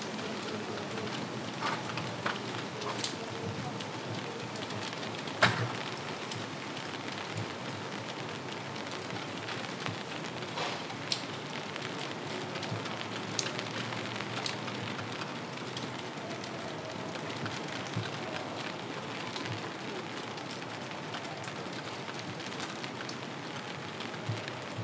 Nida, Lithuania - Art Colony Rooftop
Recordist: Ribbet Malone
Description: On the rooftop of the Nida Art Colony. Rain drops, far away construction sounds, cars in the distance and people passing under the bridge. Recorded with ZOOM H2N Handy Recorder.
4 August 2016, 16:33